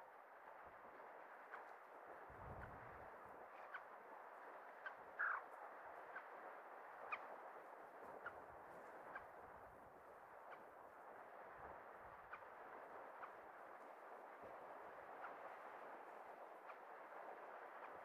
Lake Vegoritida, Greece - Lake Vegotidi